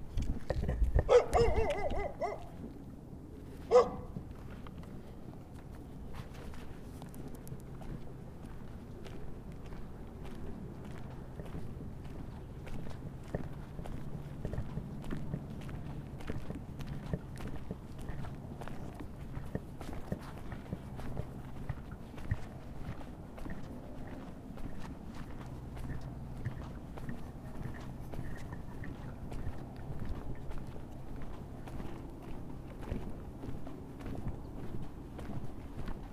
{
  "date": "2018-06-28 13:50:00",
  "description": "two men from the road work crew are talking about the temporary toilets at the job site, we're about halfway then... soon we dip off the road and through the trees, out into the hot summer sun, across the tracks and find a nice shady spot under a big ponderosa to hang out for a bit...",
  "latitude": "35.57",
  "longitude": "-105.76",
  "altitude": "2246",
  "timezone": "America/Denver"
}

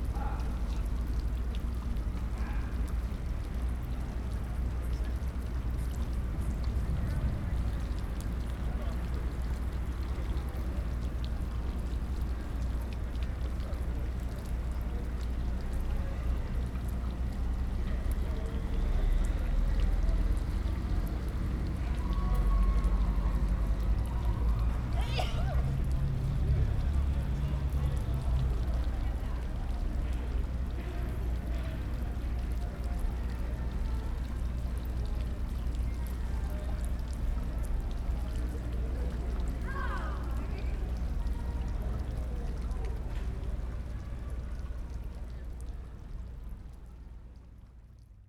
{
  "title": "Church bells at noon, Jackson Square, New Orleans, Louisiana - Bells at Noon",
  "date": "2012-09-05 11:57:00",
  "description": "*Best with headphones* : Sounds that reached me while sitting at the fountain in front of St. Louis Cathedral; waiting for the bell to sound at noon. People talking about the heat, and other obvious things. Music filtering in from around the French Quarter.\nChurch Audio CA14(quasi binaural) > Tascam DR100 MK2",
  "latitude": "29.96",
  "longitude": "-90.06",
  "altitude": "9",
  "timezone": "America/Chicago"
}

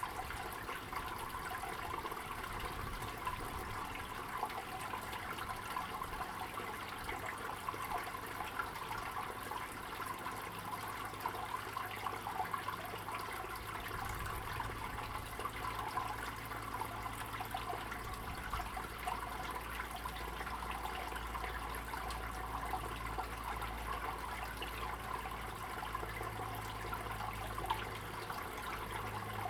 {
  "title": "奇美村, Rueisuei Township - Cicadas and Water sound",
  "date": "2014-10-09 14:03:00",
  "description": "Traffic Sound, Birds singing, Cicadas sound, Water sound\nZoom H2n MS+XY",
  "latitude": "23.50",
  "longitude": "121.45",
  "altitude": "93",
  "timezone": "Asia/Taipei"
}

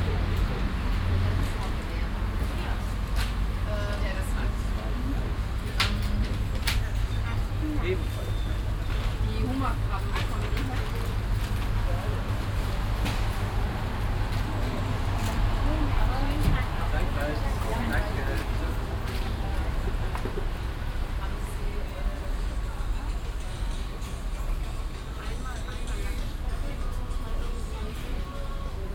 {
  "title": "weekly market - cologne, pastor könn place, weekly market",
  "date": "2008-06-04 11:56:00",
  "description": "marktplatz morgens, schritte auf nassemkopfsteinpflaster, kundengespräche, geldwechsel\nsoundmap: köln/ nrw\nproject: social ambiences/ listen to the people - in & outdoor nearfield recordings",
  "latitude": "50.94",
  "longitude": "6.94",
  "altitude": "58",
  "timezone": "Europe/Berlin"
}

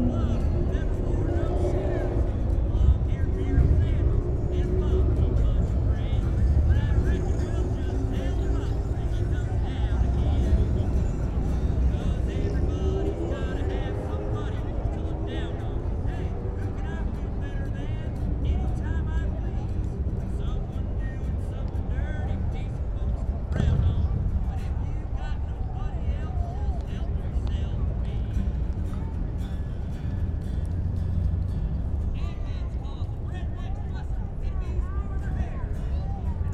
{
  "title": "Mile Square, Indianapolis, IN, USA - Binaural Bicycling",
  "date": "2017-03-05 16:04:00",
  "description": "Binaural recording of riding a bicycle on Meridian St. in Indianapolis. Heading south down around the circle and continuing down to the Wholesale District. Best listened to with headphones to get the maximum binaural effect.\nSony PCM-M10\nAudiotalaia Omnidirectional Microphones (binaural)\nSound Forge - fades",
  "latitude": "39.77",
  "longitude": "-86.16",
  "altitude": "237",
  "timezone": "America/Indiana/Indianapolis"
}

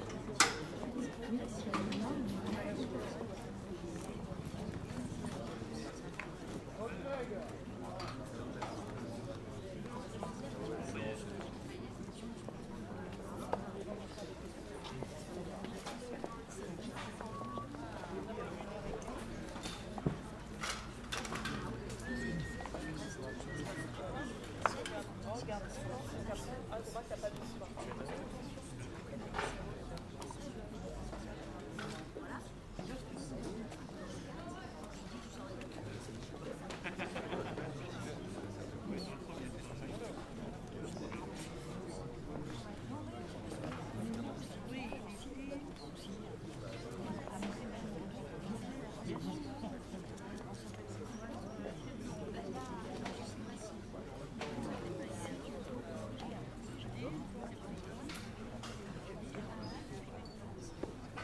{
  "title": "villa roccabella le pradet",
  "description": "pendant le tournage de vieilles canailles avec claude brasseur, françois berlean, patrick chesnais",
  "latitude": "43.11",
  "longitude": "6.00",
  "altitude": "47",
  "timezone": "Europe/Berlin"
}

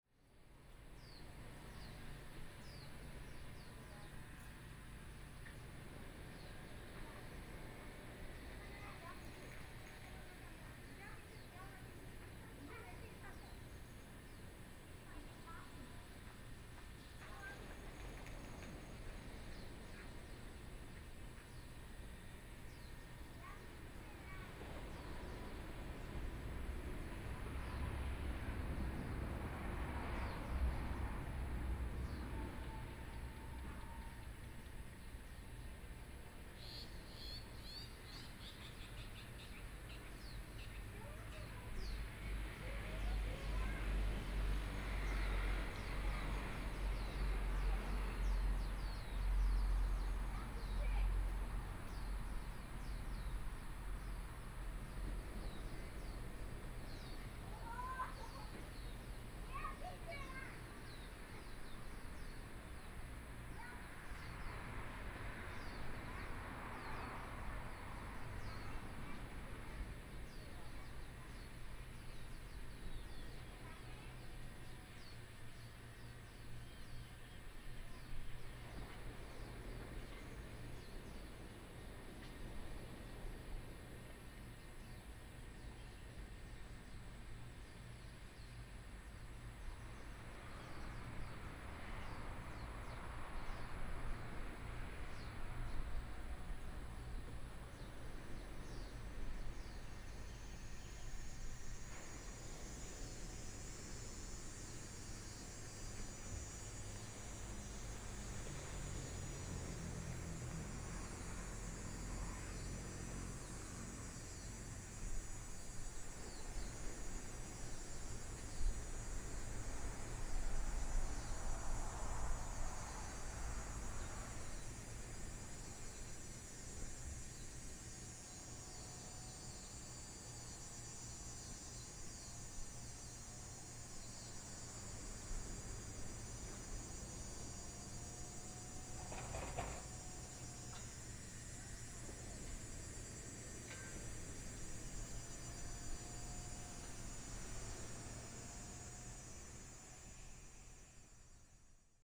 {
  "title": "鹽寮村, Shoufeng Township - Abandoned rest area",
  "date": "2014-08-28 18:18:00",
  "description": "Traffic Sound, Birds, In a small village, Abandoned rest area, Sound of the waves, Very hot days",
  "latitude": "23.88",
  "longitude": "121.60",
  "altitude": "21",
  "timezone": "Asia/Taipei"
}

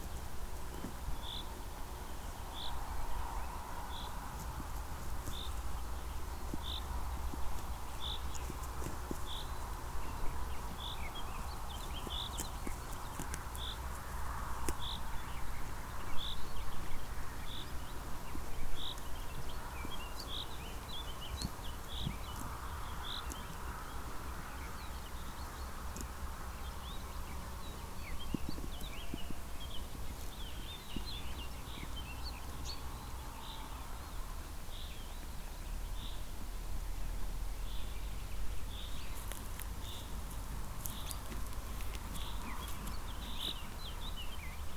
{
  "title": "hoscheid, sheeps on a meadow",
  "date": "2011-06-02 16:05:00",
  "description": "Two sheeps eating grass on a meadow. The birds in the distant trees, the drone of the traffic coming in and out with the mellow wind movements. Recorded in early spring in the early evening time.\nHoscheid, Schafe auf einer Wiese\nZwei Schafe essen Gras auf einer Wiese. Die Vögel in den fernen Bäumen, das Dröhnen des Verkehrs kommt und geht mit den sanften Windbewegungen. Aufgenommen im Frühjahr am frühen Abend.\nHoscheid, moutons dans une prairie\nDeux moutons broutant de l’herbe sur une prairie. Les oiseaux dans les arbres dans le lointain, le bourdonnement du trafic entrant et sortant avec les doux mouvements du vent. Enregistré au début du printemps, en début de soirée.\nProjekt - Klangraum Our - topographic field recordings, sound sculptures and social ambiences",
  "latitude": "49.95",
  "longitude": "6.08",
  "altitude": "477",
  "timezone": "Europe/Luxembourg"
}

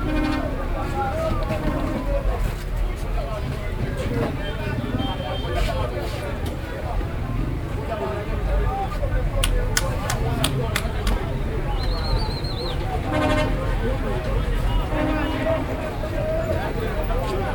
{
  "title": "Nairobi Central, Nairobi, Kenya - Traders and Matatus...",
  "date": "2010-06-17 12:44:00",
  "description": "A busy market street in the inner city; many wholesalers shops where street traders buy their goods; long lines of Matatu’s waiting and “hunting” for customers….",
  "latitude": "-1.28",
  "longitude": "36.83",
  "altitude": "1653",
  "timezone": "Africa/Nairobi"
}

